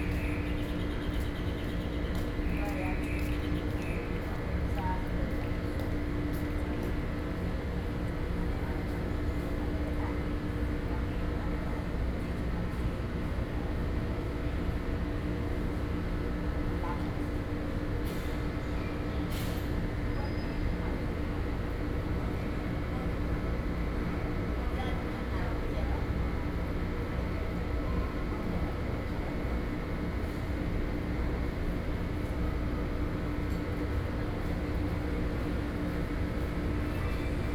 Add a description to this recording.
In the MRT exit, A group of people living in the vicinity of the old woman sitting in the exit chat, Sony PCM D50 + Soundman OKM II